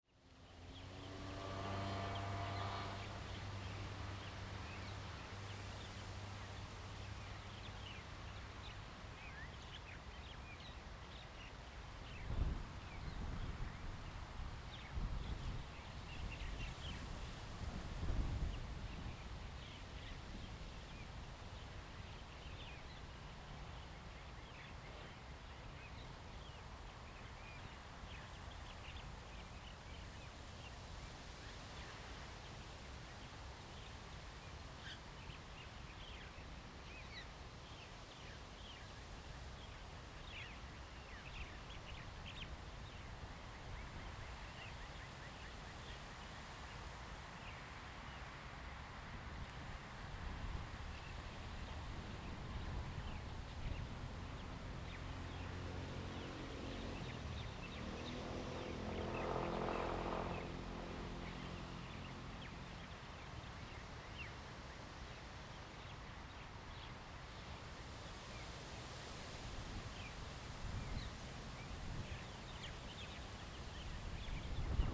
Birds singing, Wind, In the Square
Zoom H2n MS+XY
3 November 2014, 08:45